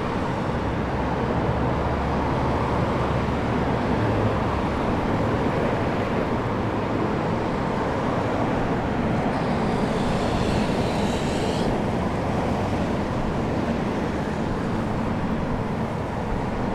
Goss - Grove, Boulder, CO, USA - 3rd Floor Roadside Balcony